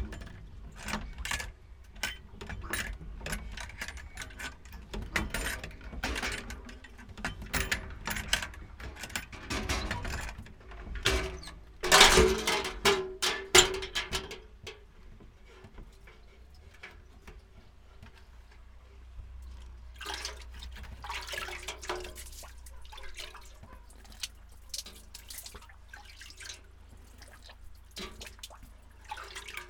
Ruský Potok, Ruský Potok, Slovensko - Studňa
Východné Slovensko, Slovensko, 7 August 2020